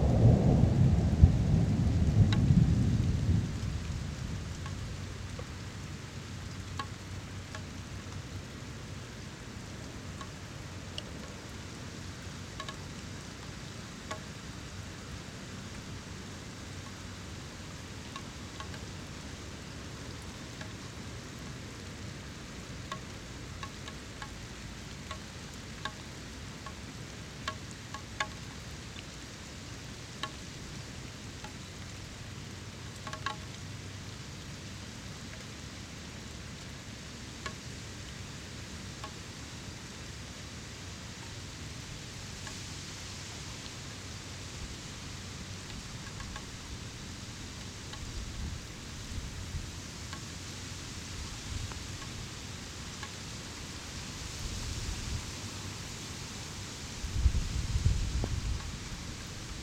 walking through half abandoned aream collecting Ivan Chai for tea, suddenly sky darkens....
Vidzeme, Latvija